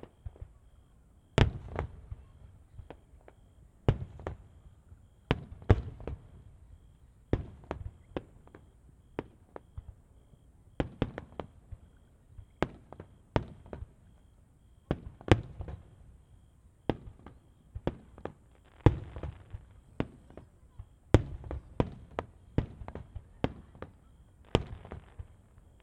Deba, Ritto, Shiga Prefecture, Japan - Yasugawa Fireworks
Yasugawa Fireworks Display (野洲川花火大会), 25 July 2015. Audio-Technica BP2045 microphone aimed north toward fireworks launched over the river. Echo on the left is from an embankment and a Panasonic factory nearby.